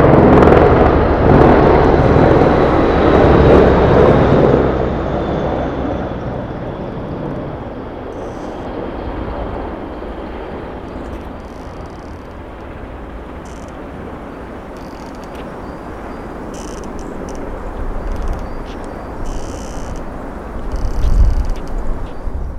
Greenham Commom cruise missle bunkers - 2009-03-25 165548 Greenham Common
2009-03-25 165548 Greenham Common - helicopters landing on former cruise missile bunkers.